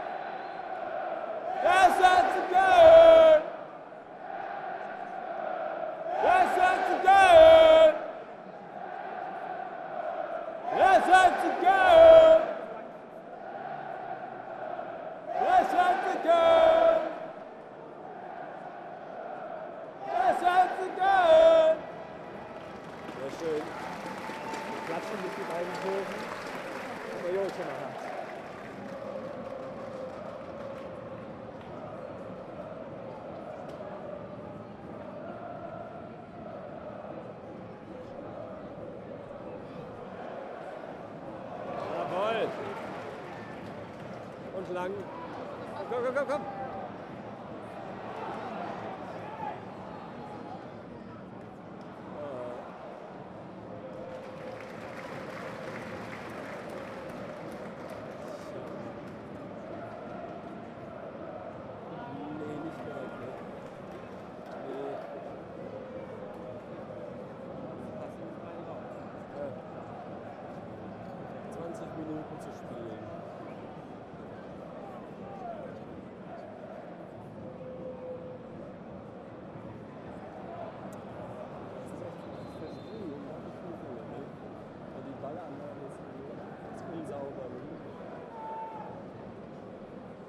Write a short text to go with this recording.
Chanting Football Fans at the Match Cologne vs. Bochum (2:0)